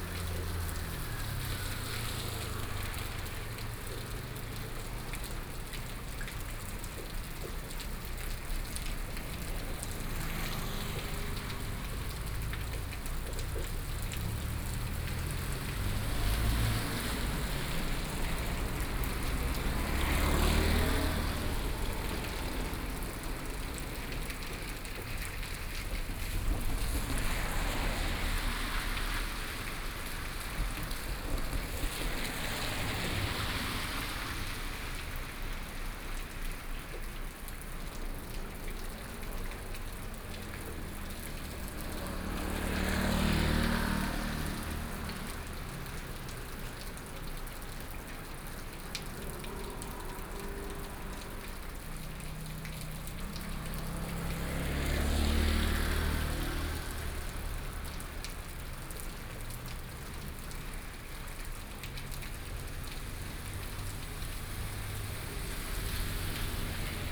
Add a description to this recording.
Standing in front of a railroad crossing, The traffic sounds, Train traveling through, Binaural recordings, Zoom H4n+ Soundman OKM II